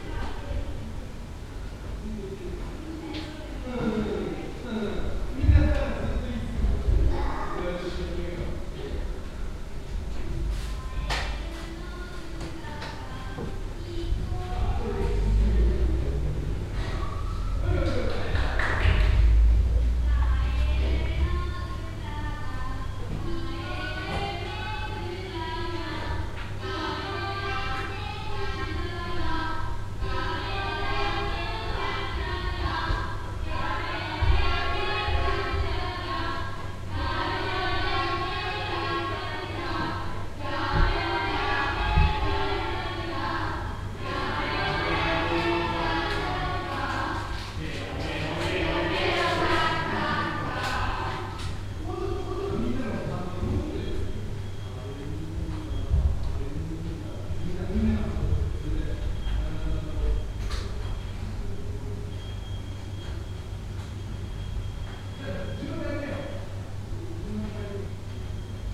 takasaki, ballet studio
inside the 2nd floor of the takasaki ballet studio. a class of young students rehearsing a chorus with their teacher - background the permanent wind of the aircondition and steps in the floor
international city scapes - social ambiences and topographic field recordings